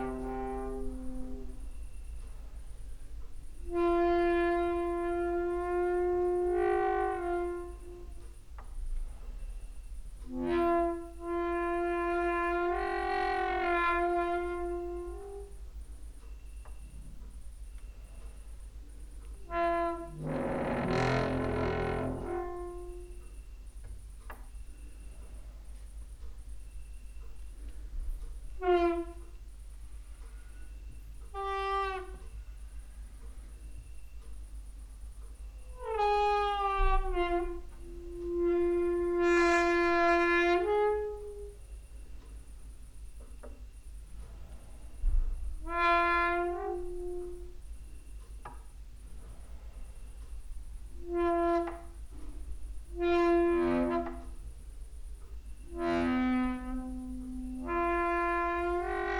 Mladinska, Maribor, Slovenia - late night creaky lullaby for cricket/26
times of "hüzün" and tearing cricket song